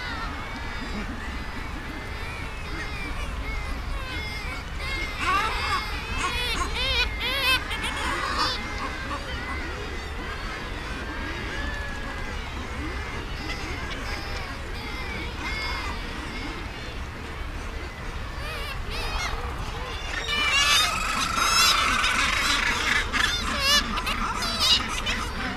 East Riding of Yorkshire, UK - Guillemots ... mostly ...
Guillemots ... mostly ... guillemots calling on the ledges of RSPB Bempton Cliffs ... bird calls from gannet ... kittiwake ... razorbill ... lavalier mics on a T bar fastened to a fishing landing net pole ... some windblast and background noise ...
Bridlington, UK